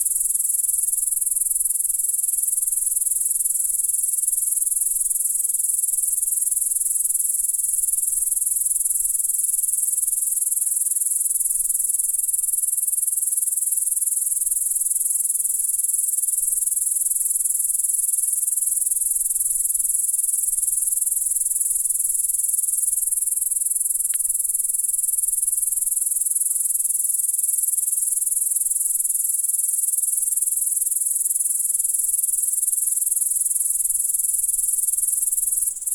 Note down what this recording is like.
Grasshoppers chirping late in the evening in a suburban garden. Recorded with Olympus LS-10.